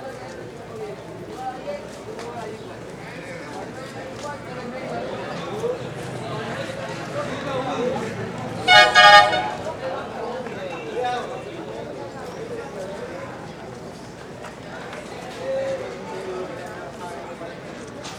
Santiago de Cuba, calle Jose Maria Heredia
street corner near the market place
December 2003